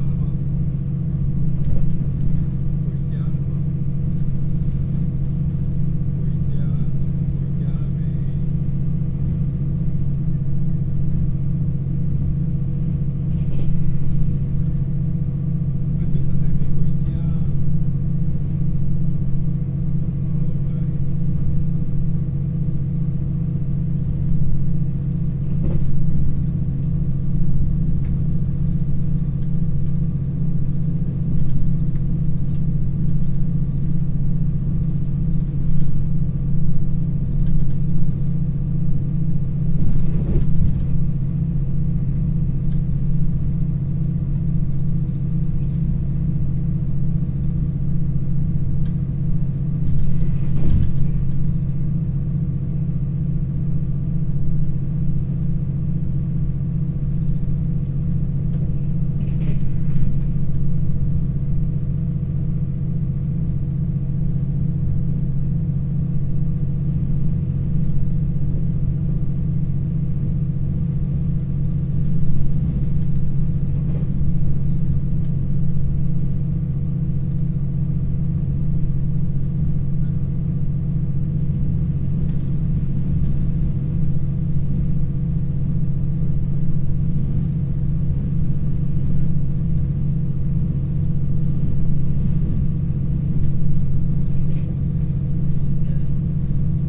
Fangliao Township, Pingtung County - Tze-chiang limited express
Diesel Multiple Unit, In the train compartment
Binaural recordings, Sony PCM D100+ Soundman OKM II